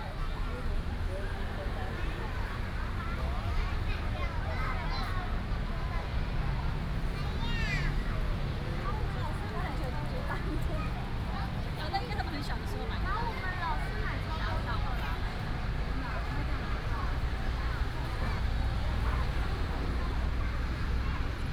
中央公園, Hsinchu City - Walking in the Park
Walking in the Park, traffic sound, birds sound, Childrens play area, Binaural recordings, Sony PCM D100+ Soundman OKM II
27 September, 16:48